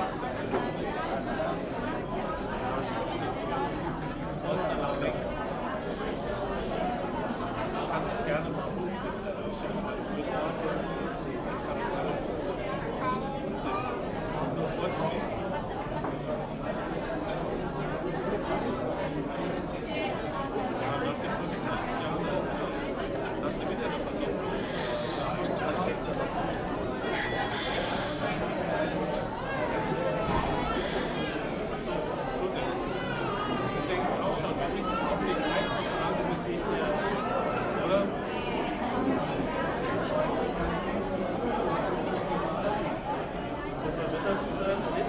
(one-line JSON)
{"date": "2009-10-01 19:36:00", "description": "In a packed restaurant the crowd is rather talking than eating.", "latitude": "51.95", "longitude": "7.64", "altitude": "60", "timezone": "Europe/Berlin"}